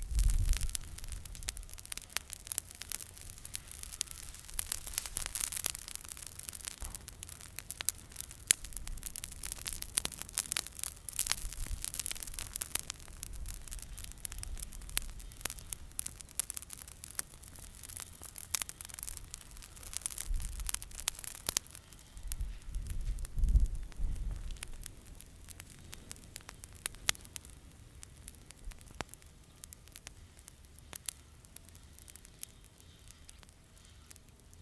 Houliao, Fangyuan Township - The sound of fire
Old people are burning dry leaves and branches, Zoom H6